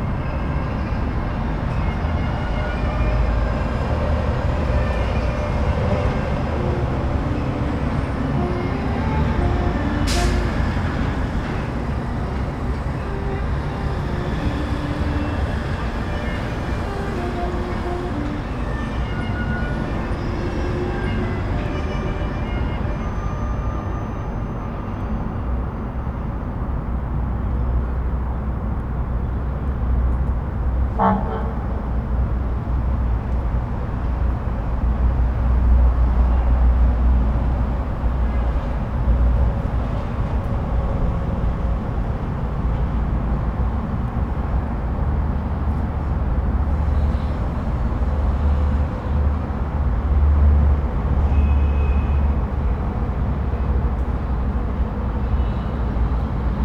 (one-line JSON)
{"title": "China, Shanghai Shi, Baoshan Qu, Tongji Rd, 936号, 同济路市河桥以北约50米 - Saxophone rehearsing", "date": "2017-05-25 14:51:00", "description": "A person rehearsing saxophone with instrumental music in noisy environment\nUne personne s’entraine au saxophone dans un environnement bruyant", "latitude": "31.40", "longitude": "121.48", "altitude": "4", "timezone": "Asia/Shanghai"}